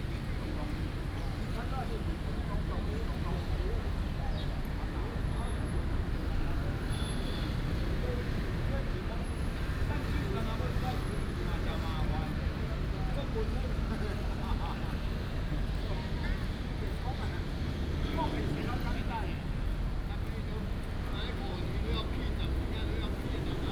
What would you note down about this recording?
in the Park, The elderly and children, Traffic Sound, Bird calls